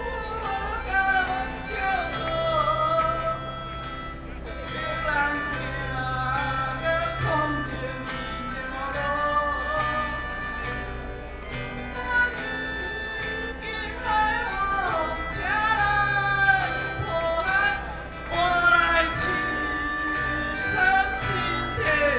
Ketagalan Boulevard, Zhongzheng District - Protest
Self-Help Association of speech, Sony PCM D50 + Soundman OKM II
Taipei City, Taiwan, August 2013